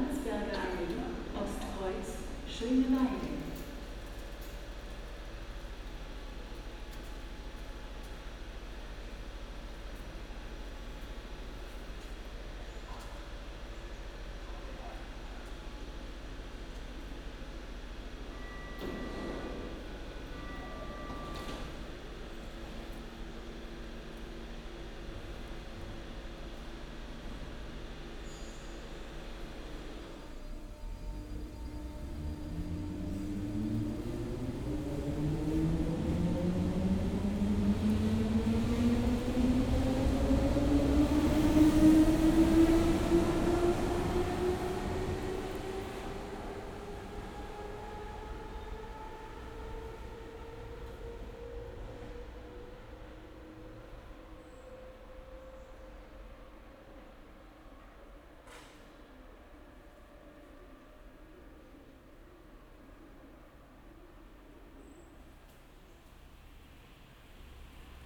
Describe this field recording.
Saturday morning, almost no one on the platform, only trains, announcements and pigeons, iPhone 11 Sennheiser Ambeo Smart